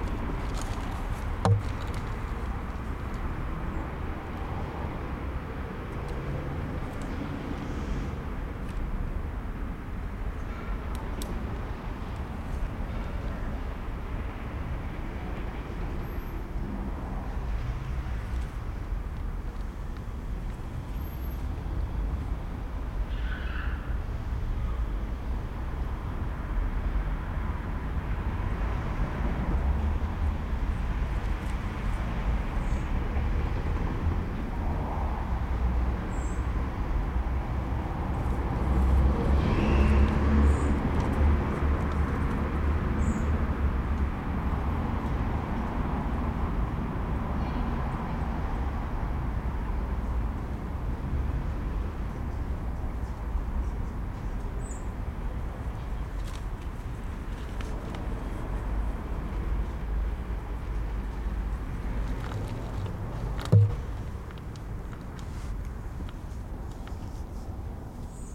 leipzig, karl-heine-platz, auf einer bank unter bäumen.
auf einer bank im park, stille, die straße von ferne.
Leipzig, Deutschland, 31 August